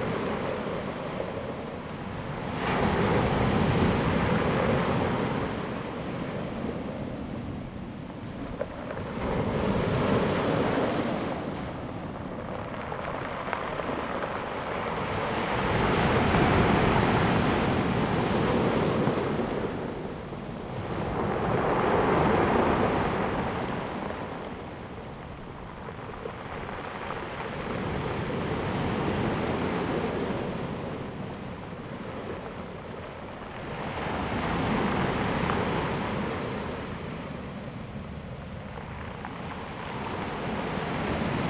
南興村, Dawu Township - Sound of the waves
Sound of the waves, Circular stone coast
Zoom H2n MS +XY
Taitung County, Taiwan, September 2014